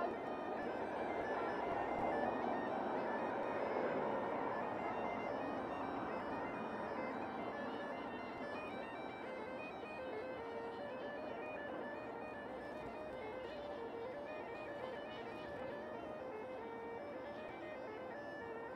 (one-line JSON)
{"title": "North Dock, Dublin, Ireland - A bag pipe on my pocket", "date": "2014-03-17 16:51:00", "description": "A bagpipe player makes a pleasant pad that spruce up the Samuel Beckett bridge's soundscape a couple of hours after Saint Patrick's parade.\nOther points of this soundwalk can be found on", "latitude": "53.35", "longitude": "-6.25", "altitude": "6", "timezone": "Europe/Dublin"}